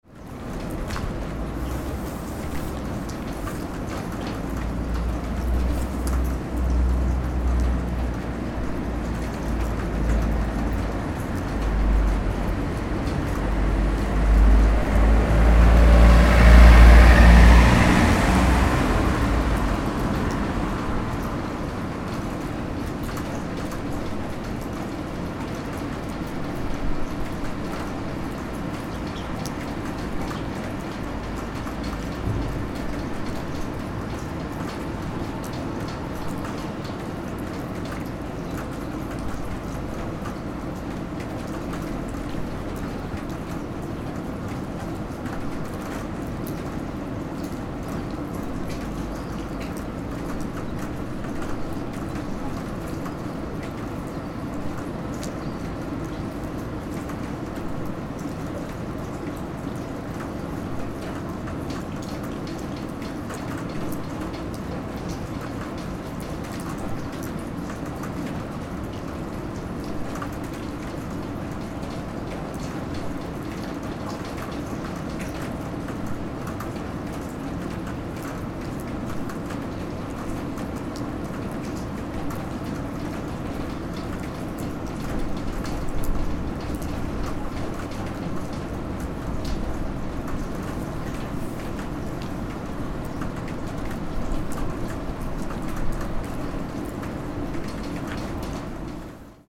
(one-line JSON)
{"title": "Orrtorget, Sollefteå, Rainy Orrtorget on a monday morning", "date": "2011-07-18 08:55:00", "description": "Recording of an empty and for this morning rainy square named Orrtorget in Sollefteå city center while waiting for participants for the soundwalk (of the World Listening Day) that is soon to begin.", "latitude": "63.17", "longitude": "17.27", "altitude": "2", "timezone": "Europe/Stockholm"}